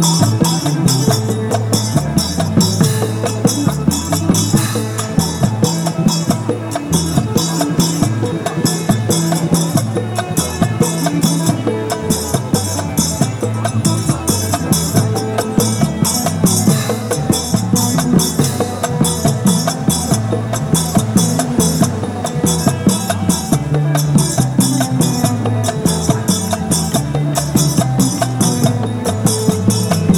Khuekkhak, Takua Pa District, Phang-nga, Thailand - Great live band at Sarojin with dancers
binaural recording with Olympus